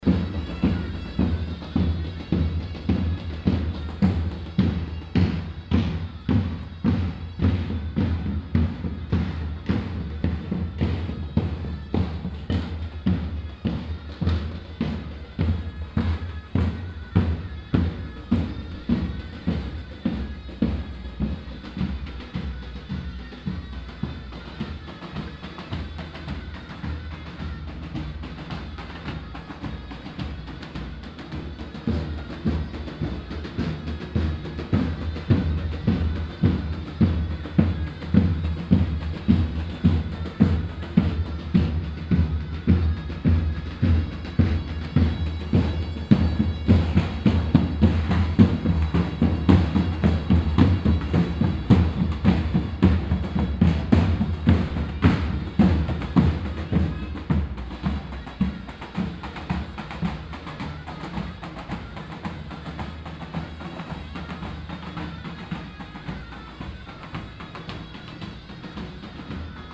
cologne, alteburger wall, kurdische hochzeit
kurdische hochzeitsfeier mittags auf der strasse, trommler und schalmaispieler
soundmap nrw: social ambiences/ listen to the people - in & outdoor nearfield recordings